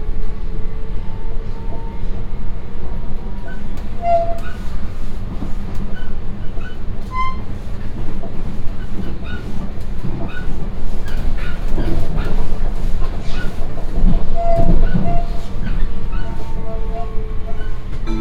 Heemstedestraat, Amsterdam, Netherlands - (296 BI) Metro ride
Binaural recording of a metro ride from Heemstedestraat.
Recorded with Soundman OKM on Sony PCM D100